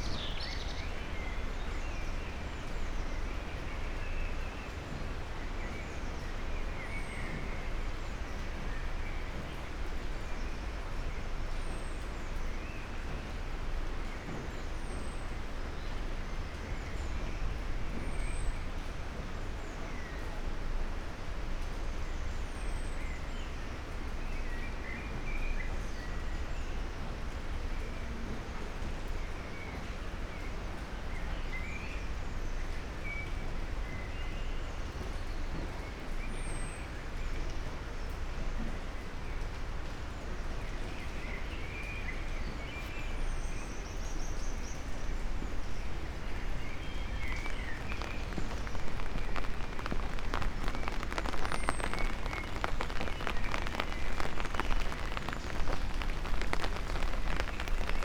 Maribor, Mariborski Otok - upper floor, terrace, raindrops, umbrella